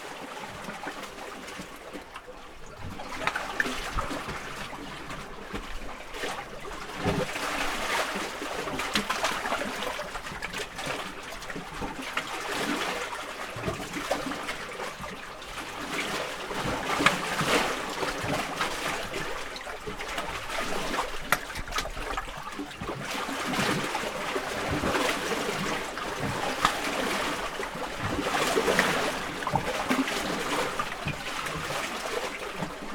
Waves hitting the breakwater and going through a hole under the rocks at Nallikari beach. Recorded with Zoom H5 with default X/Y capsule. Wind rumble removed in post.